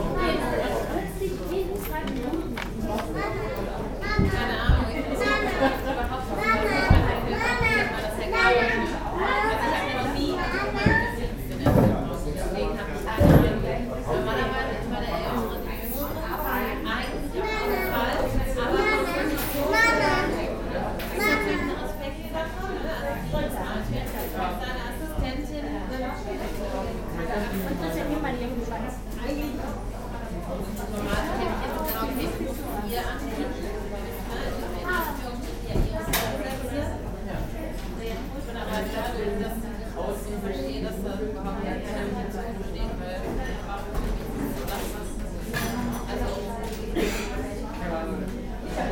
cologne, körnerstraße, cafe sehnsucht
inside cafe sehnsucht on an afternoon - busy talking, steps and coffe machine sounds, a child
soundmap nrw - social ambiences and topographic field recordings